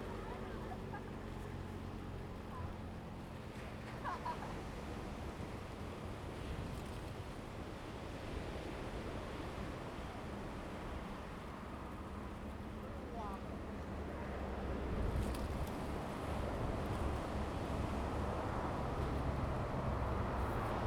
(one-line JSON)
{"title": "大鳥村, Dawu Township - At the seaside", "date": "2014-09-05 16:55:00", "description": "Sound of the waves, At the seaside\nZoom H2n MS +XY", "latitude": "22.41", "longitude": "120.92", "altitude": "39", "timezone": "Asia/Taipei"}